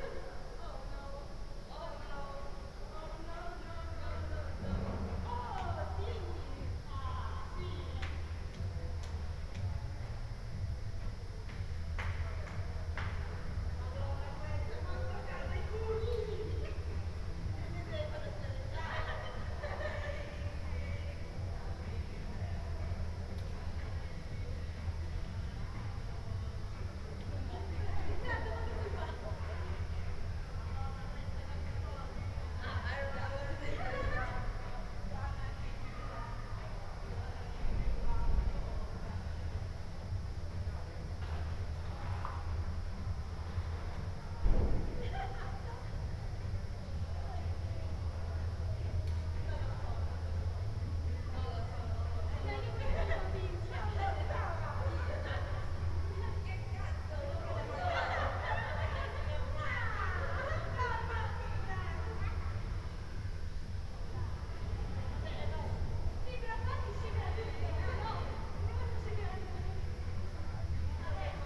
Ascolto il tuo cuore, città, I listen to your heart, city. Several chapters **SCROLL DOWN FOR ALL RECORDINGS** - Easter Monday afternoon with laughing students in the time of COVID19: Soundscape.
"Easter Monday afternoon with laughing students in the time of COVID19": Soundscape.
Chapter CLXVI of Ascolto il tuo cuore, città. I listen to your heart, city
Monday, April 5th, 2021. Fixed position on an internal terrace at San Salvario district Turin, One year and twenty-six days after emergency disposition due to the epidemic of COVID19.
Start at 3:58 p.m. end at 4:23 p.m. duration of recording 25’00”